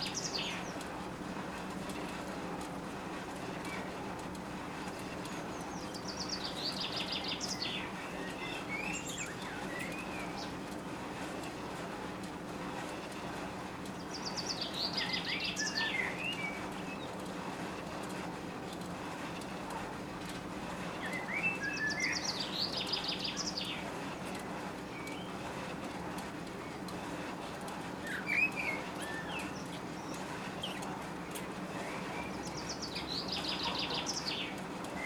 burg/wupper, steinweg: sesselbahn - the city, the country & me: under a supporting tower of a chairlift
rope of chairlift passes over the sheaves, singing bird (different height position)
the city, the country & me: may 6, 2011
Solingen, Germany, 6 May 2011, ~12:00